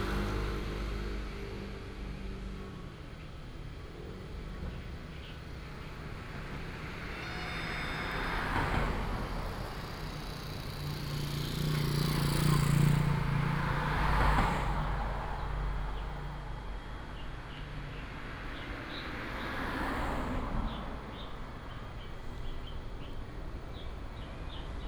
{"title": "Fengshi Rd., Shigang Dist., Taichung City - Next to the factory", "date": "2017-11-01 14:29:00", "description": "Out of the factory, Factory sound, Traffic sound, Bird call, Binaural recordings, Sony PCM D100+ Soundman OKM II", "latitude": "24.28", "longitude": "120.77", "altitude": "284", "timezone": "Asia/Taipei"}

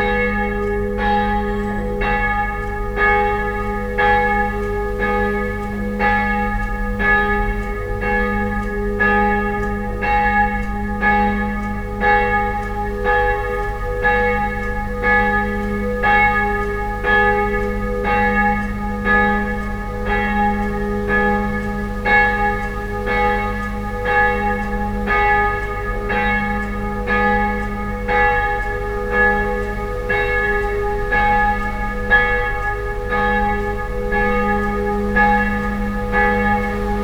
{"title": "Josef Str, Hamm, Germany - Angelus - Der Engel des Herrn...", "date": "2014-08-17 19:00:00", "description": "… one sound features strongly, and comes in live… (it’s the traditional call for the prayer called “Angulus” in the Catholic Church; it rings at 7am, 12 noon and 7 pm)...", "latitude": "51.67", "longitude": "7.80", "altitude": "64", "timezone": "Europe/Berlin"}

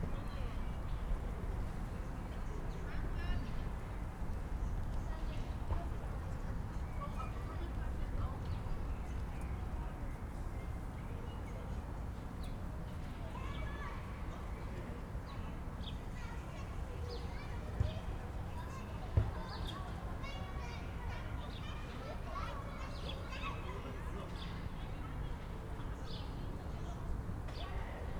{"title": "Wolfgang-Heinz-Straße, Berlin-Buch - Plattenbau, building block, yard ambience", "date": "2019-03-30 16:00:00", "description": "yard ambience, kids playing, sounds from inside building\n(Sony PCM D50, DPA4060)", "latitude": "52.63", "longitude": "13.49", "altitude": "57", "timezone": "Europe/Berlin"}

